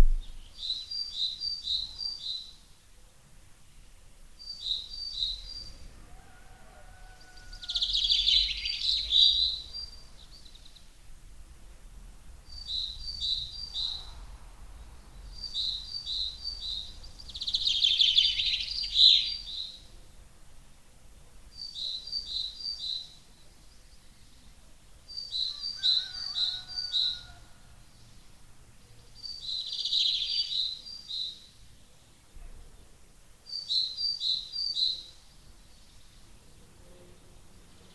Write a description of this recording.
Binaural recording of a greenfinch and redstart singing with a rooster in distance. Recorded with Soundman OKM on Sony PCM D100